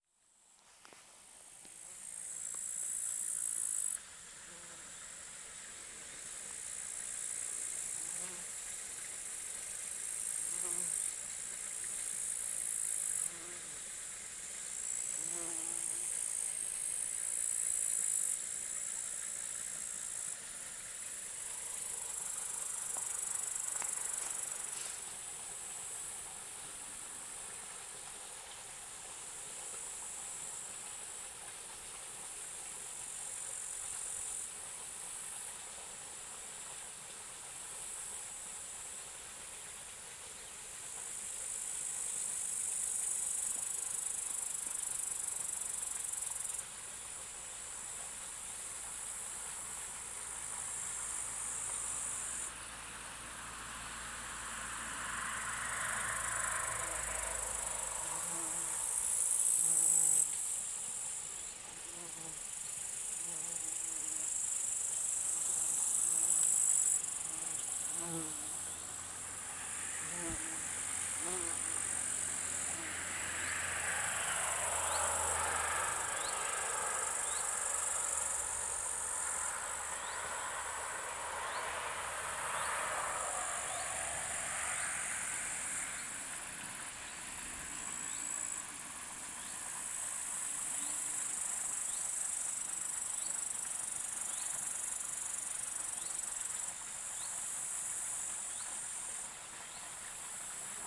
Zákoutí, Blatno, Czechia - Bílina soundscape with bumblebee
Bumblebee next to the side creek of Bilina river